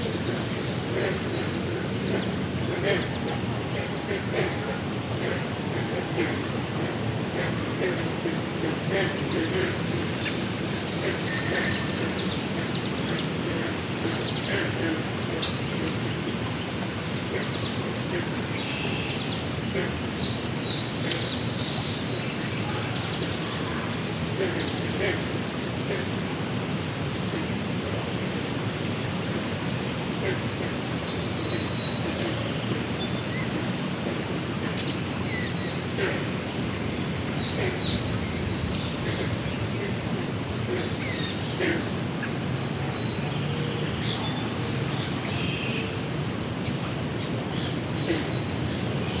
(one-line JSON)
{"title": "Taylor Creek Park, Toronto, ON, Canada - WLD 2020 Frogs & Dogs", "date": "2020-07-10 16:00:00", "description": "Recording from the creek near a pond populated with green frogs (Rana clamitans), which much of the time are able to outdo the dogs (though to be fair, those are probably further away).", "latitude": "43.70", "longitude": "-79.31", "altitude": "108", "timezone": "America/Toronto"}